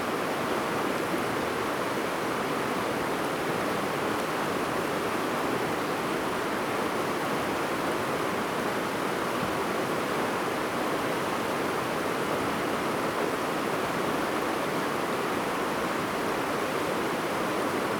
In the river, Stream sound
Zoom H2n MS+XY